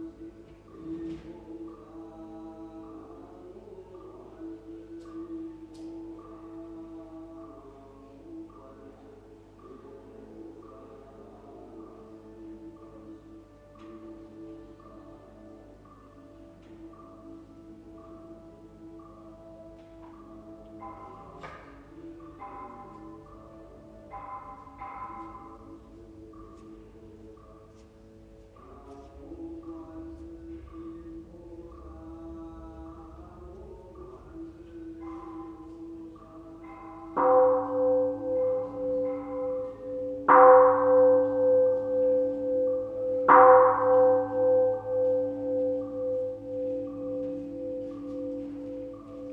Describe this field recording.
Ackerstraße, Berlin - Waiting for the mass in Buddhist temple Fo-guang-shan. [I used an MD recorder with binaural microphones Soundman OKM II AVPOP A3]